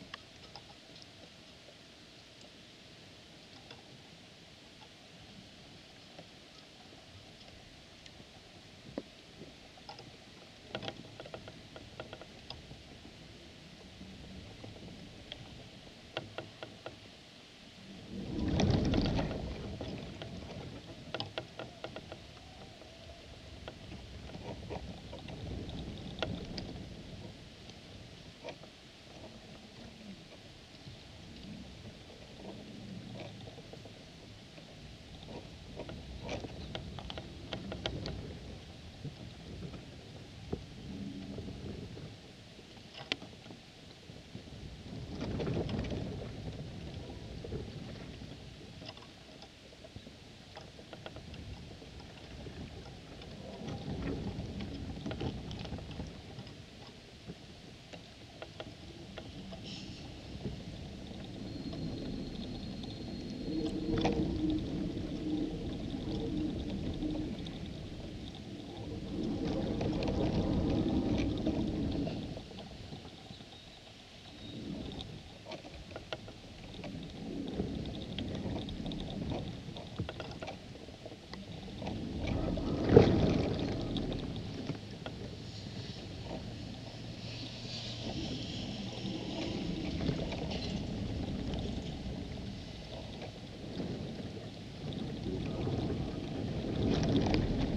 Maribor, Slovenia - one square meter: reed and cable
a dead reed and a heavy, rusted iron cable, both originating on the shore but with ends below the surface of the water. the reed vibrates in the wind like an aeolian harp. recorded with contact microphones. all recordings on this spot were made within a few square meters' radius.